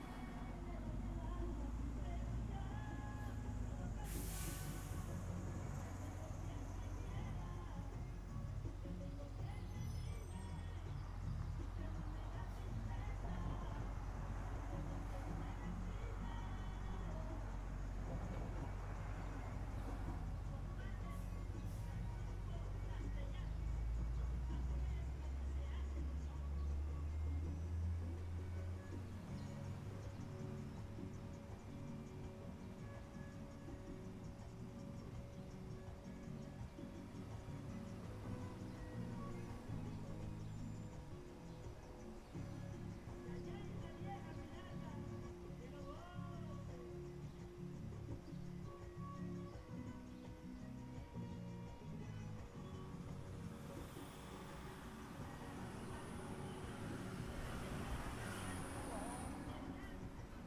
Sitting Outside The Laundromat. You can hear music through a the closed windows of a car.
East Elmhurst, NY, USA, 3 March 2017, 14:20